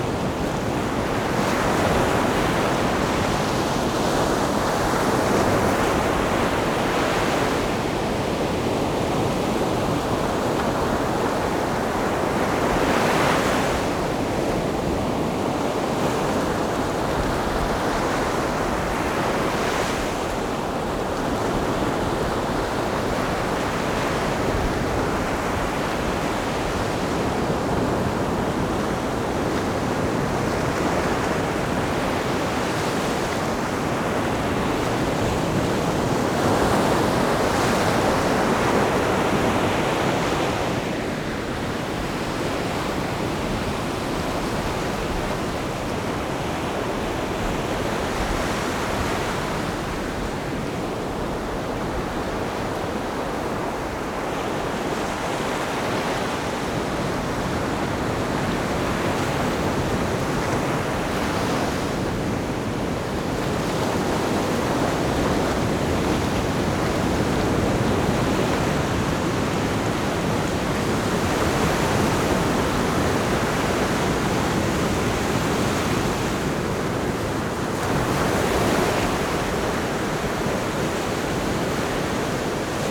Big waves, sound of the waves
Zoom H4n+Rode NT4(soundmap 20120711-12 )